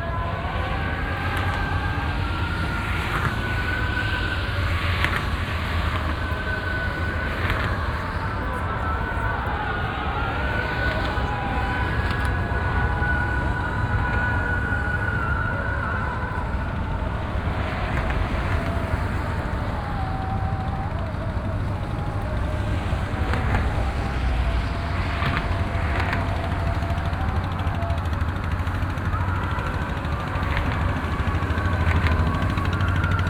Europe / France / Istanbul - Galata bridge - Istanbul
Zoom H4, soundman